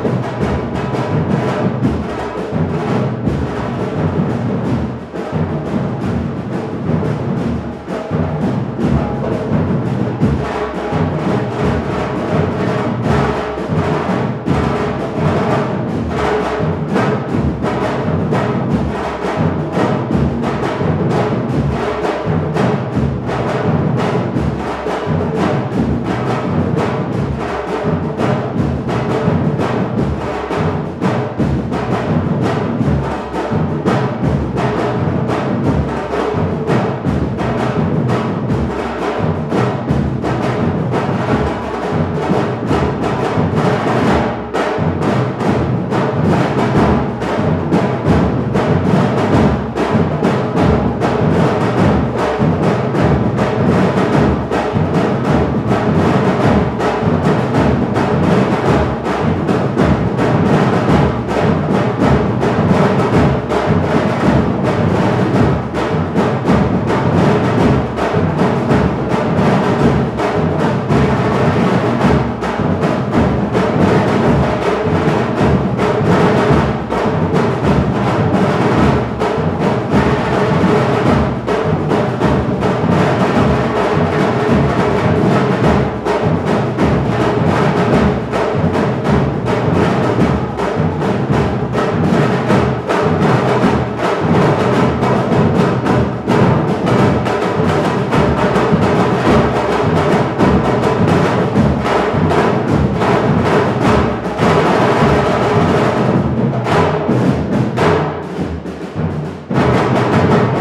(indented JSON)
{
  "title": "Av. Francia, Valparaíso - Batucada rehearsal in Valparaiso",
  "date": "2015-11-18 17:00:00",
  "description": "On Francia Avenue in Valparaiso, a batucada band is rehearsing inside a hangar... I record them from the entrance of the place.",
  "latitude": "-33.05",
  "longitude": "-71.61",
  "altitude": "84",
  "timezone": "America/Santiago"
}